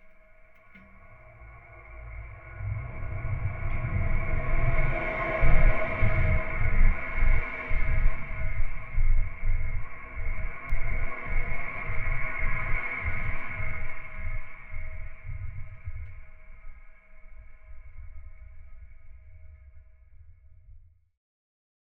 Nemeikščiai, Lithuania, trucks on bridge
Heavy trucks on physically trembling bridge. Contact microphones on metallic parts and concrete.
Utenos rajono savivaldybė, Utenos apskritis, Lietuva